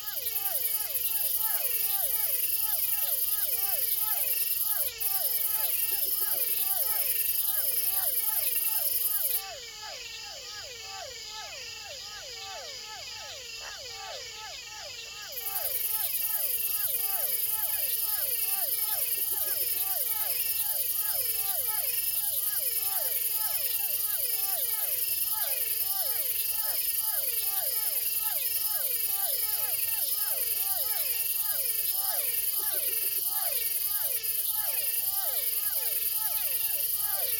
Gran Sabana, Venezuela - Toads and frogs during the night in Gran Sabana
During the night in the forest in Venezuela, frogs, toads and crickets singing...
Recorded close to Santa Elena de Uairen in Gran Sabana Venezuela.
Sound recorded by a MS setup Sennheiser Microphone MKH50+MKH30
Sound Devices 302 mixer + Sound Devices 744T recorder
MS is encoded in STEREO Left-Right
recorded in february 2011